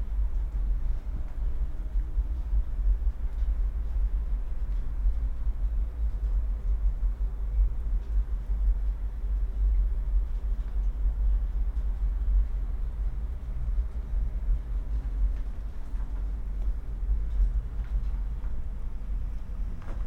Hoek van Holland, Netherlands - Gulls, ambience, distant music
Gulls, ambience, distant music